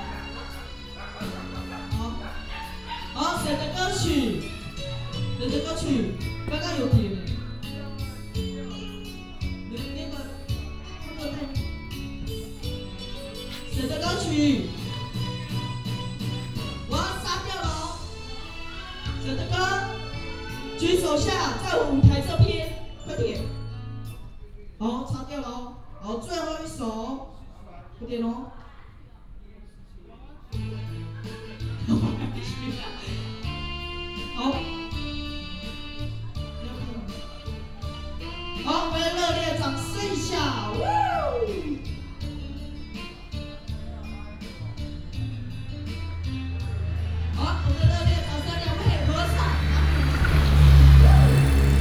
Dawu St., Dawu Township, Taitung County - Tribal evening
Karaoke, Traffic sound, Dog barking, Tribal evening
Taitung County, Taiwan, 2 April 2018